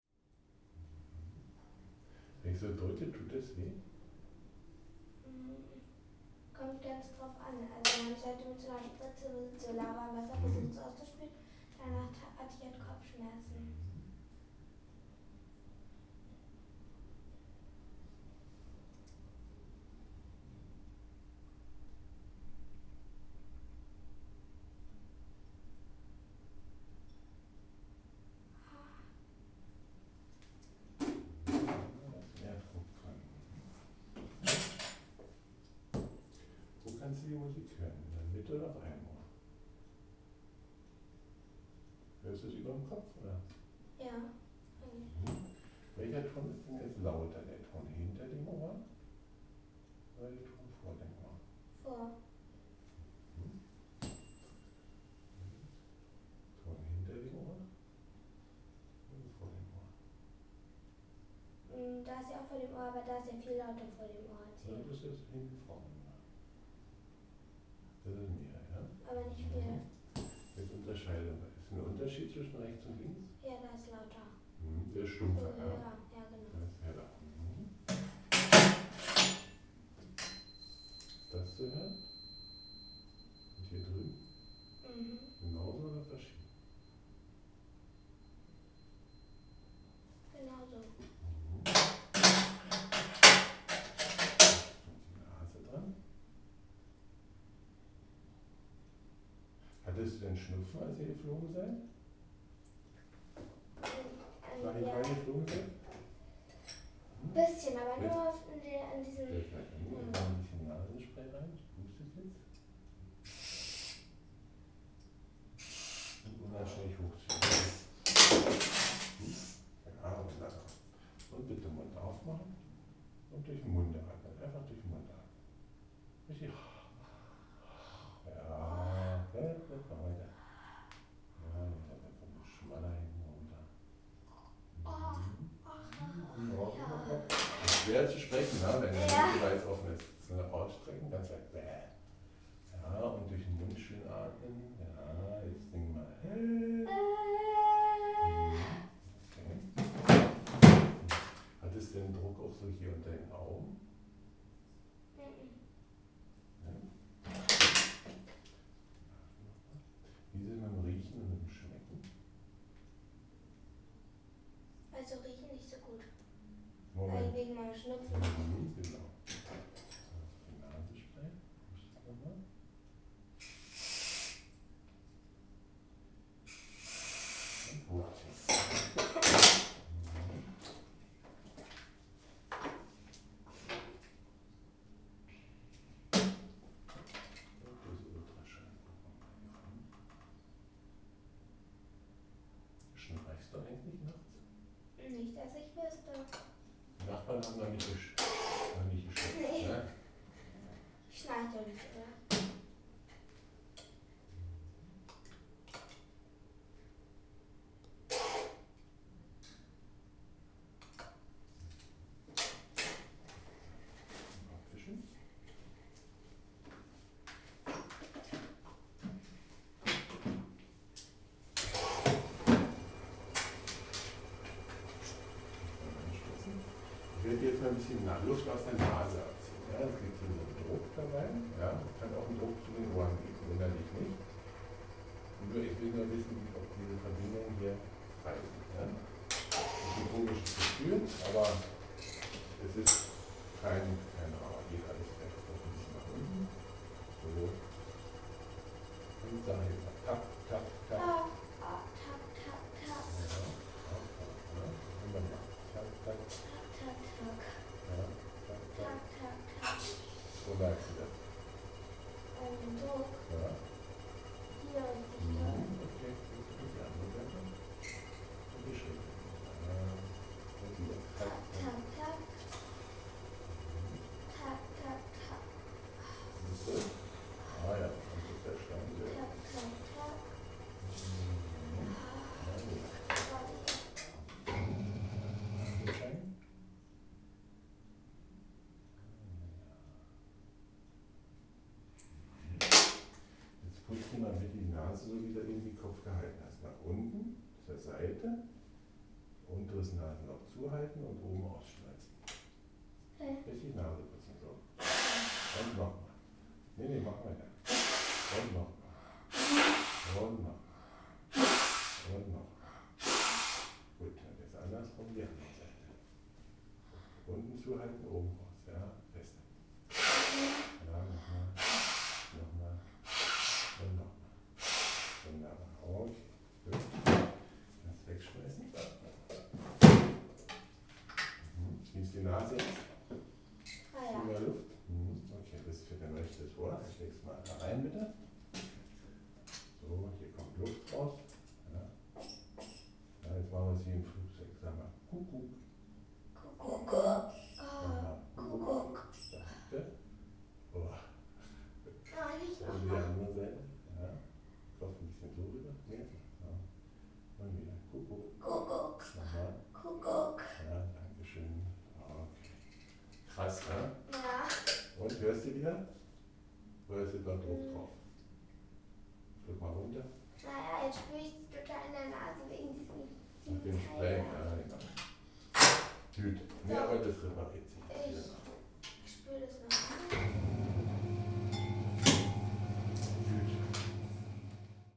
untersuchung beim hals-nasen-ohren-arzt /
examination at the ear, nose and throat doctor (otorhinolaryngologist)